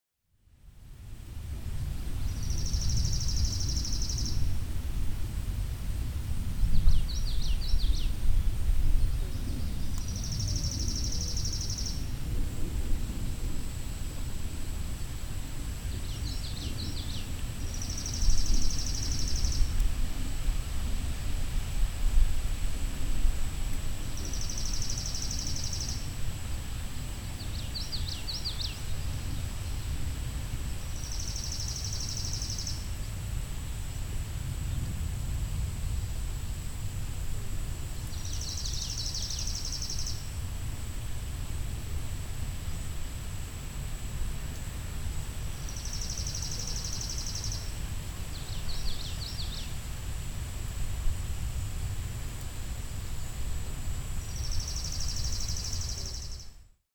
Grass Lake Sanctuary - Pond Sounds 2
Sitting on the dock, tree leaves and animal sounds...